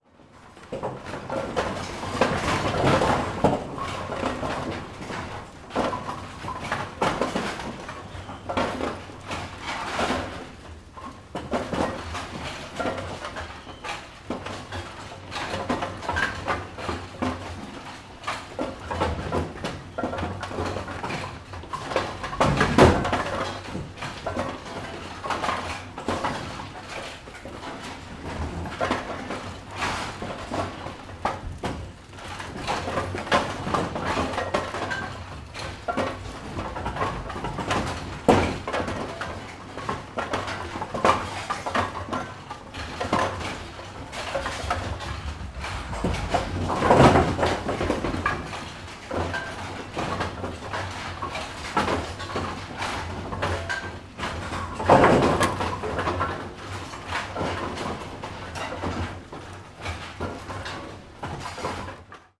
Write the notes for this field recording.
Bowling machine room at Le Colisée. ORTF recording with Sony D100, sound posted by Katarzyna Trzeciak